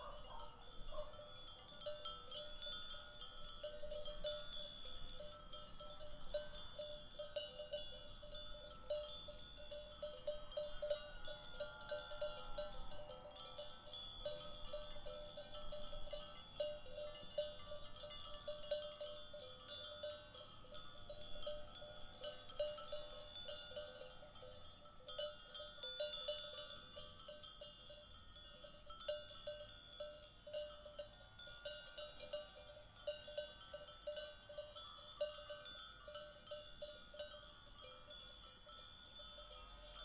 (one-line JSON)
{"title": "Monfurado, Évora, Portugal - Sheep", "date": "2020-07-08 16:38:00", "description": "Sheep grazing in a beautiful July afternoon in Monfurado", "latitude": "38.54", "longitude": "-8.13", "altitude": "325", "timezone": "Europe/Lisbon"}